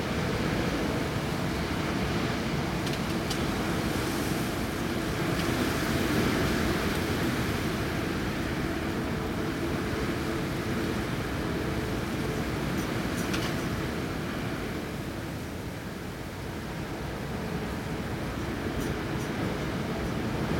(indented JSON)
{
  "title": "Raining in A Coruña (Spain) - Sant Feliu, Spain",
  "date": "2009-06-05 03:02:00",
  "latitude": "43.36",
  "longitude": "-8.40",
  "altitude": "13",
  "timezone": "Etc/Universal"
}